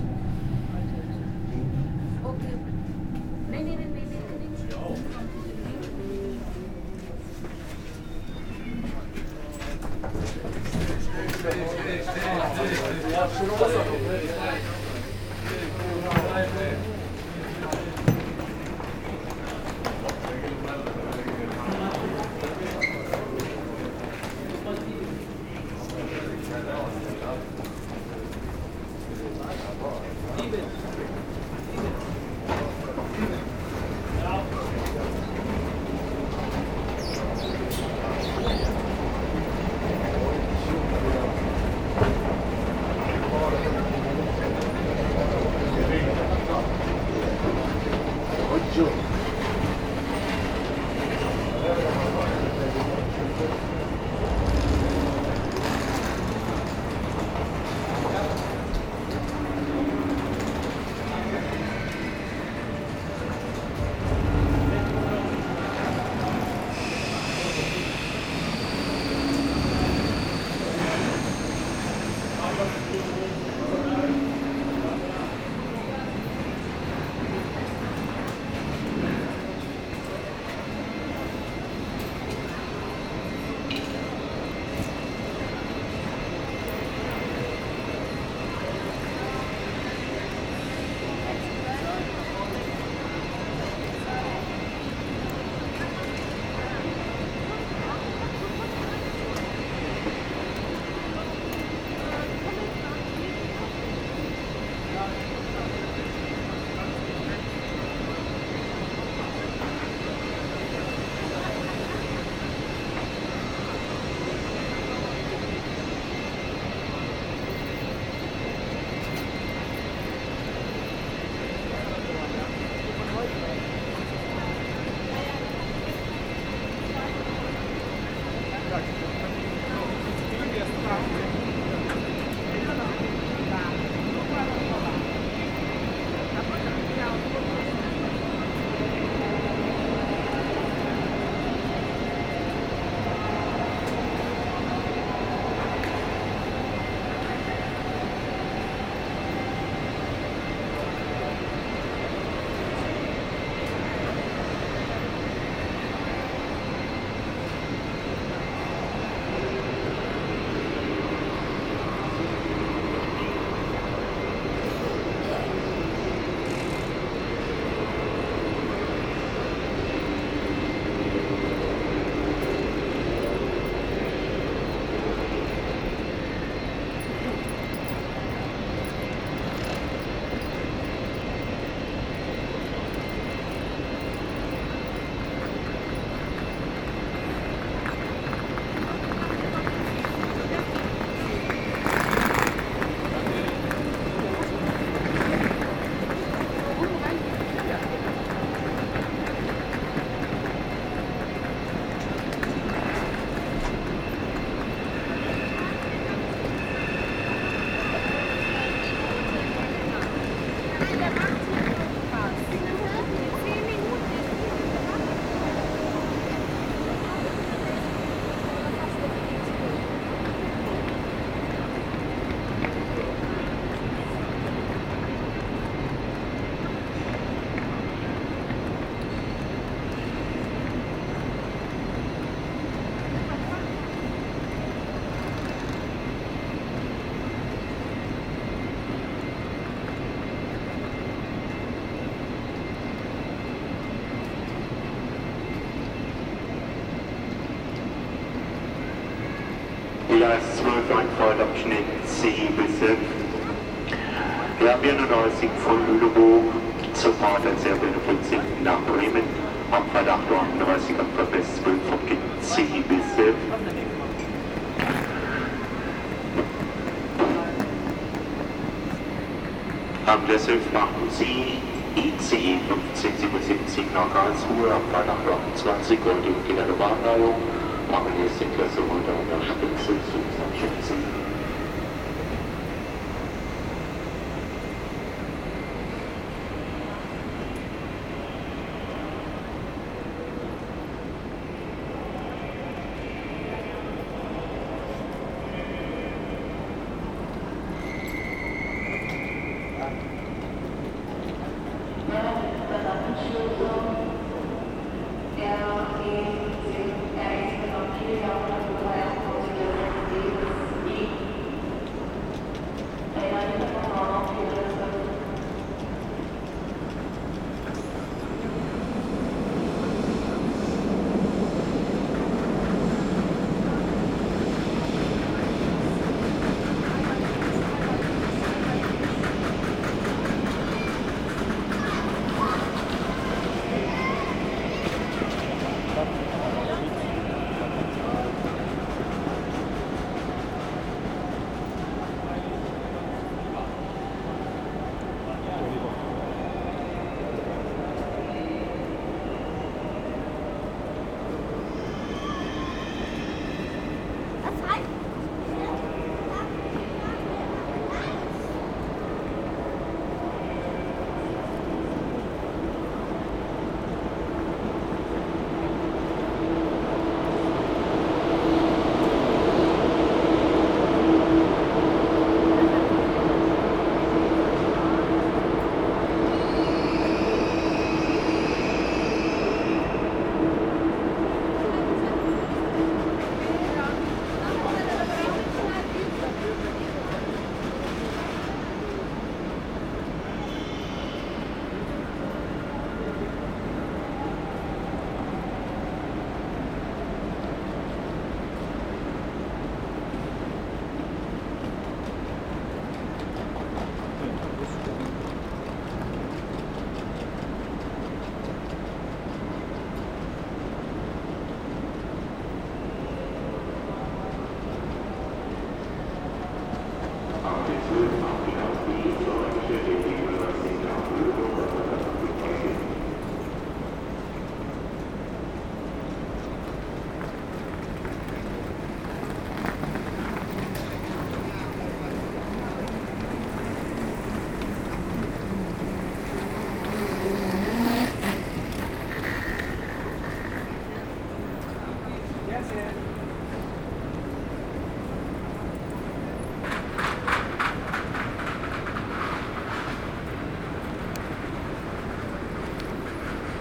Hamburg, Deutschland - Hamburg station
Hamburg Hauptbahnhof. The gigantic main station of Hamburg. Arriving by the S3 line, sounds of the trains. An ICE train leaving to Karlsruhe and a completely desesperated guy missing his train.
Hamburg, Germany, April 2019